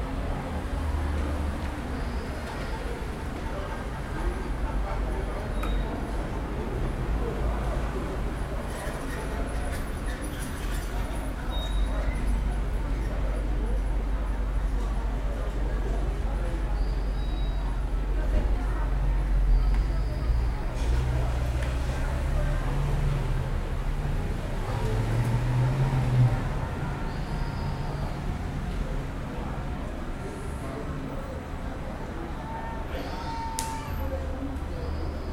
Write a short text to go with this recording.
Panelaço contra o presidente Jair Bolsonaro. Gravado com Zoom H4N - microfones internos - 90º XY. Pot-banging protests against president Jair Bolsonaro. Recorded with Zoom H4N - built-in mics - 90º XY.